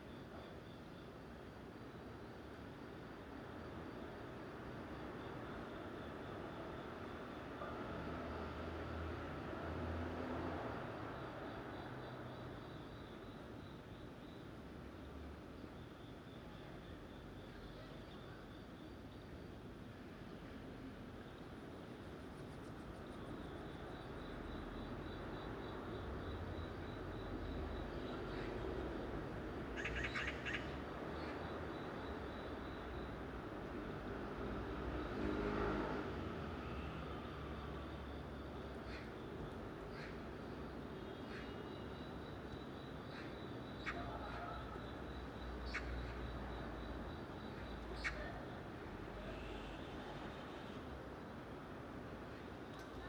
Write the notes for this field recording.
Bangbae 5th Deconstruction Zone, Cricket, 방배5주택재건축지역, 낮 귀뚜라미